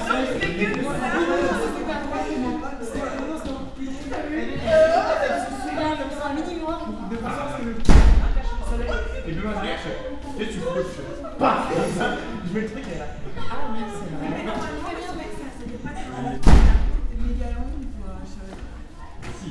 End of a course, students are discussing and go away to the next course.